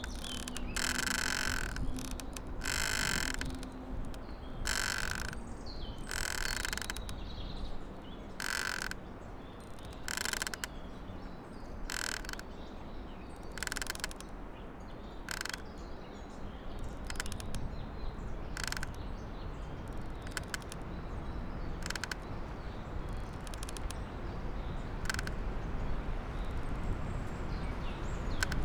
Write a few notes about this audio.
a snapped branch jammed between two trees, creaking as the trees swing in strong wind. Morasko Meteorite Nature Reserve project